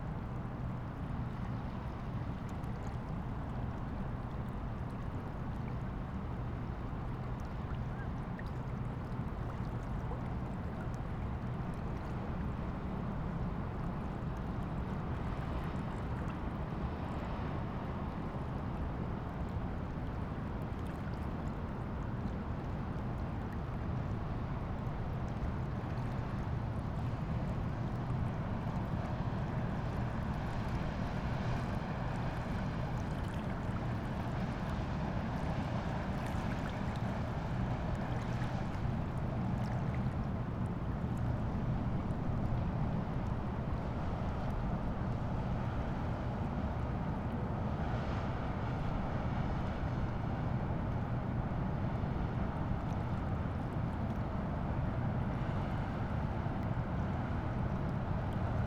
Südbrücke, Rheinufer, Köln - ships and train
near Südbrücke (train bridge), river Rhein, ships passing and a train.
(Sony PCM D-50)